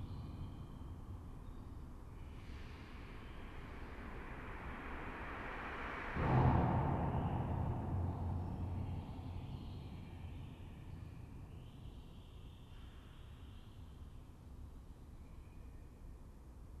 Theux, Belgium - Inside the bridge
Recording of the technical tunnel of the Polleur bridge : I'm not on the motorway but below, not on the bridge but inside. It's a extremely noisy place, especially when trucks drive on the expansion joint ; moreover elastomer padding are missing.